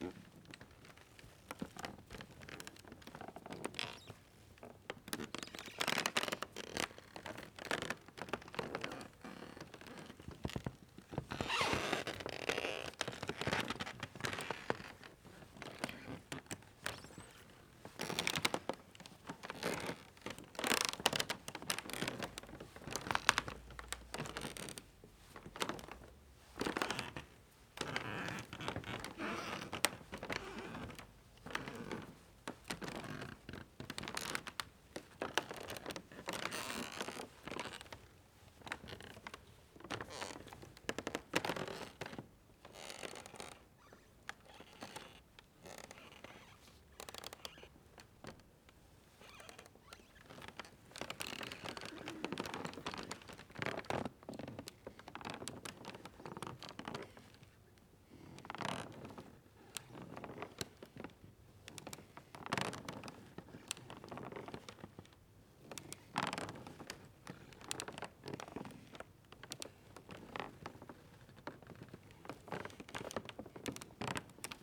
Nördliche Innenstadt, Potsdam, Germany - 2016-08-31 Alter Markt Potsdam FH Altbau Bibliothek Boden 07.15Uhr
As Peter mentions below: Creaky floor of the disused library/sports hall in the building of the University of Applied Sciences (also hosting a gallery, and the ZeM for a while), formerly the "Institut für Lehrerbildung" (GDR). I was walking over the wooden floor without shoes. Today, in April 2019, the whole building is already demolished in order to redesign Potsdam's new 'historical' inner city.
[Beyerdynamic MCE 82, Sony PCM-D100]